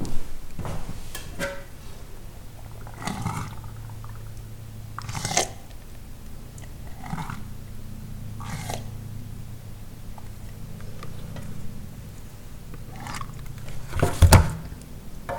Kreuzberg, Berlin, Deutschland - Einweckglas leeren
Einweckglas leeren, schmatzen